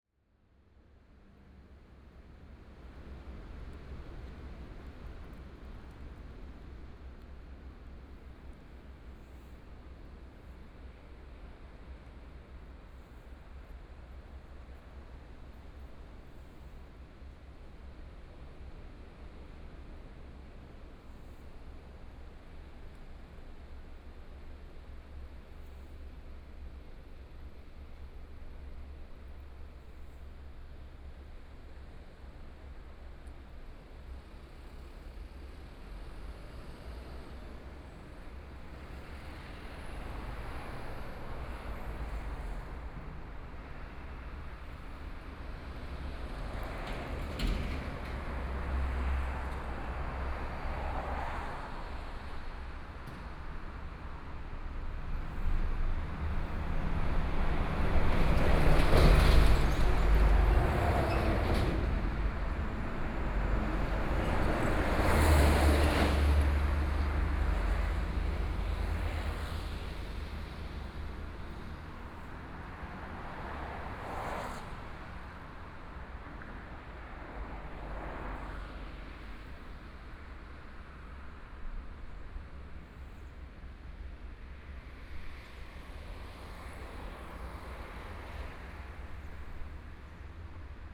Wai'ao Station, Taiwan - outside the station
Standing on a small square outside the station, In front of the traffic noise, The distant sound of the waves, Binaural recordings, Zoom H4n+ Soundman OKM II